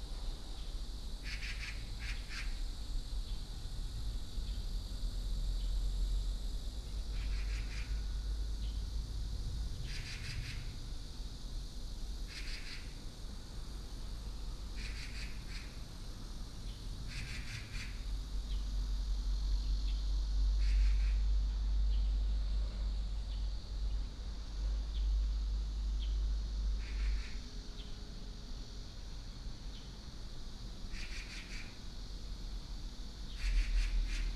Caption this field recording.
in the Park, Birds sound, Cicada cry, traffic sound, The plane flew through